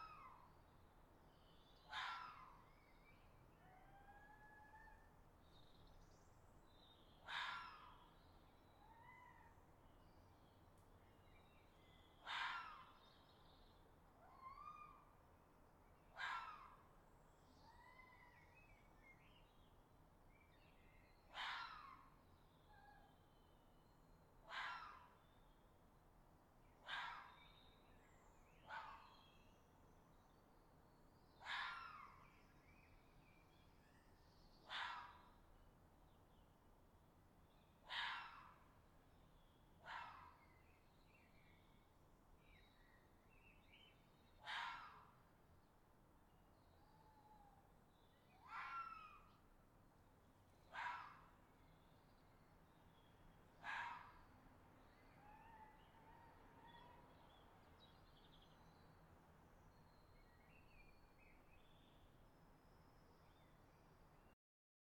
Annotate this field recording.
A recording made overnight from my balcony window recording the foxes in Brockwell Park calling, January 2020. It was a calm evening, very little wind. There was some great fox vocalisations in this, recorded using a sony PCMD100 in a rycote blimp.